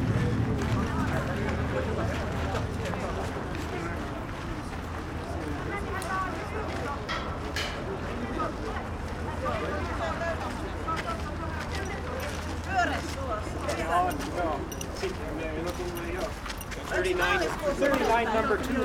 recorded during the emporal soundings workshop
Helsinki, Finland